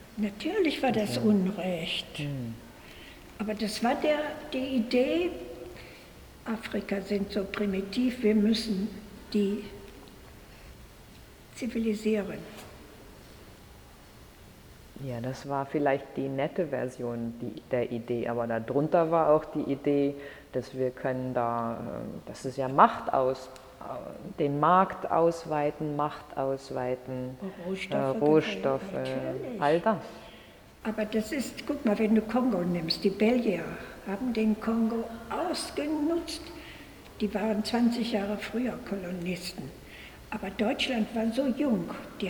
Museum, Swakopmund, Namibia - Die Deutsche Kolonialzeit....
Maria Fisch grants me a special guided tour through the Swakopmund Museum...
Maria Fisch spent 20 years in the Kavango area, first as a doctor then as ethnographer. She published many books on the history, culture and languages of the area.
3 January 2009, ~15:00